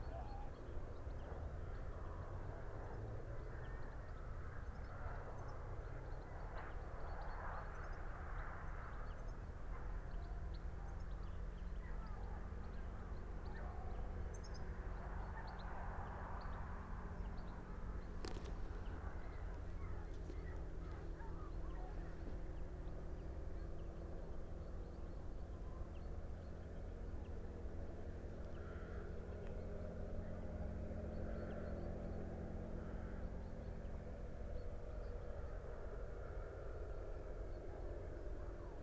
urchins wood, ryedale district ... - horses and hounds ...
horses and hounds ... parabolic ... bird calls ... goldfinch ... dunnock ... red-legged partridge ... crow ... pied wagtail ... meadow pipit ... and although distant ... some swearing ...